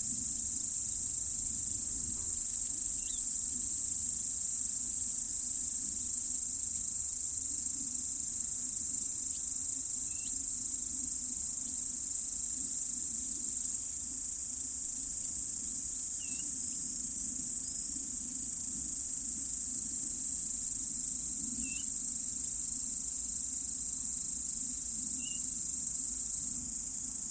Seliste crickets and birds
evening crickets and bird sounds
Pärnumaa, Estonia, July 2010